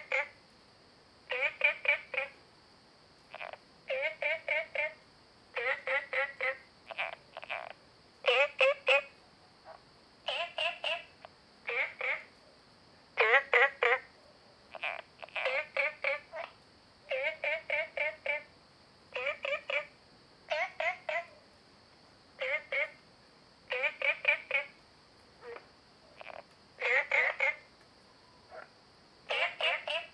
TaoMi 綠屋民宿, Nantou County - Small ecological pool
Frogs chirping, Ecological pool
Zoom H2n MS+XY